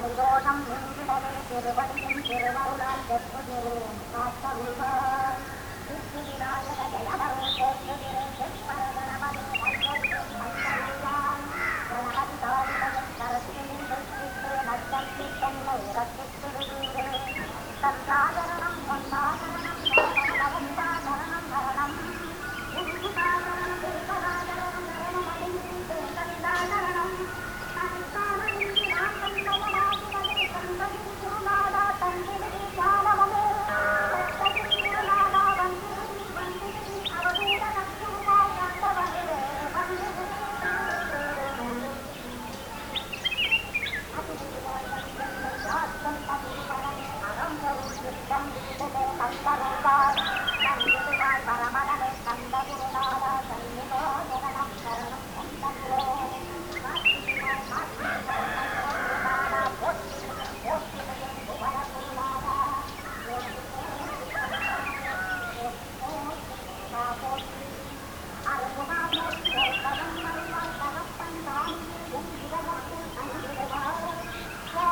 dawn in Munnar - over the valley 4